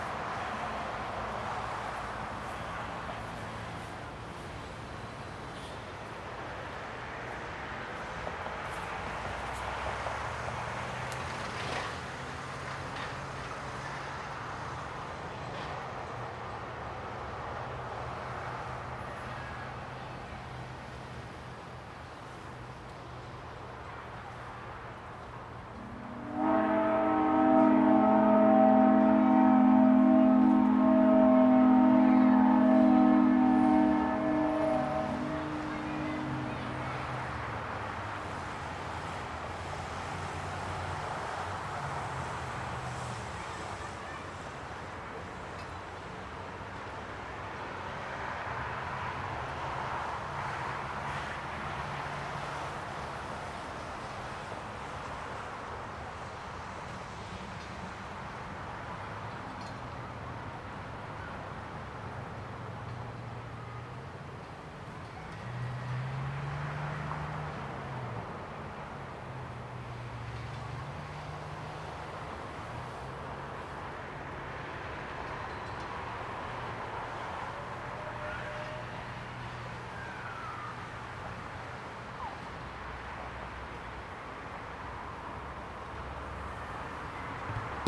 St. Mary's Hill - Whistle heard from St. Mary's hill
The whistle was recorded at 17:00 from the top of St. Mary's hill Owen Sound, Ontario. This is at a distance of apx. 2 km from the whistle itself.